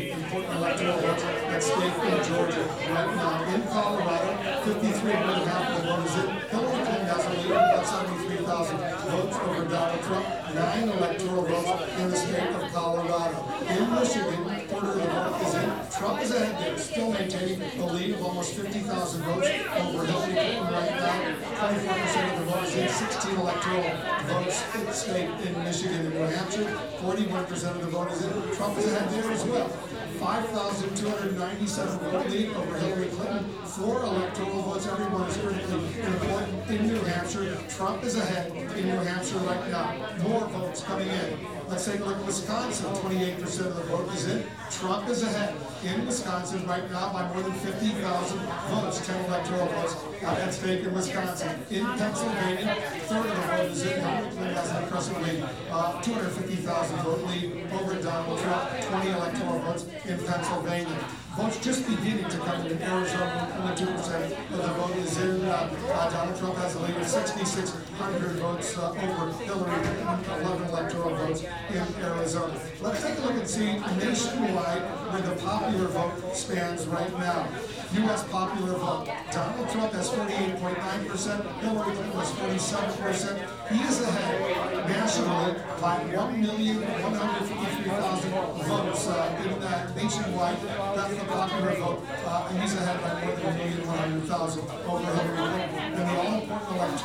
Flatbush - Ditmas Park, Brooklyn, NY, USA - Election Night in a Bar in Brooklyn.
Election Night in a Bar in Brooklyn.
USA presidential election of 2016, held on Tuesday, November 8, 2016.
Zoom H4n